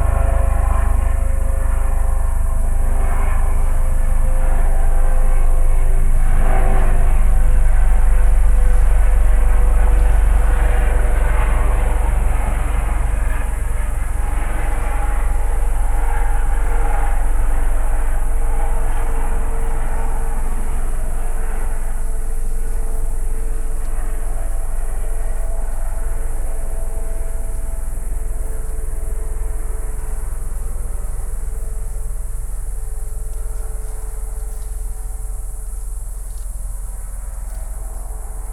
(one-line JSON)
{"title": "Negast forest, Waldteich, Pond, Rügen - Propellerplane passes over", "date": "2021-08-08 21:18:00", "description": "Small propelled aircraft on a late summer evening", "latitude": "54.38", "longitude": "13.28", "altitude": "3", "timezone": "Europe/Berlin"}